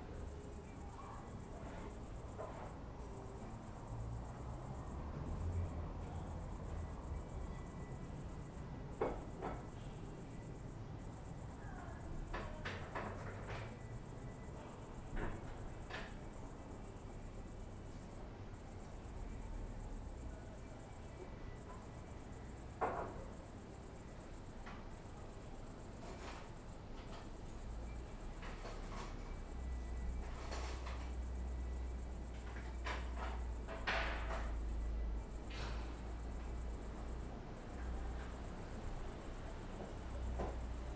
Köln, Maastrichter Str., backyard balcony - grille
lonely late summer cricket, backyard abmience